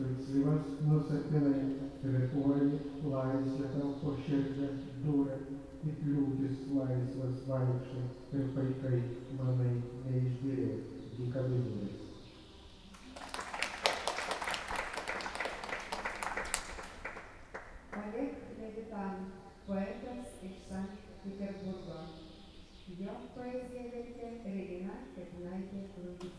Sudeikiai, Lithuania, poetry readings heard inside the church
poets reading their poetry in the churchyard, I recorded it as heard inside the church